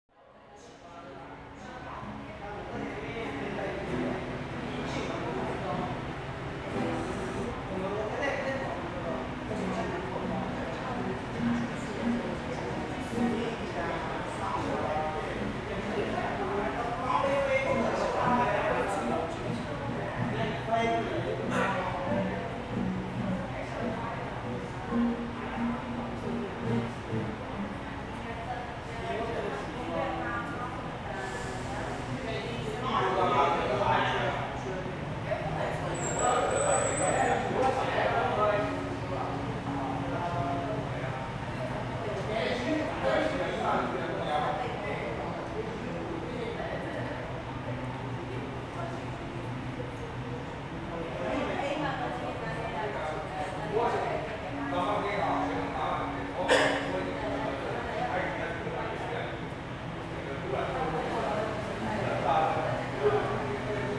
Kuo-Kuang Motor Transportation - Bus station hall
Bus station hall at night, Sony ECM-MS907, Sony Hi-MD MZ-RH1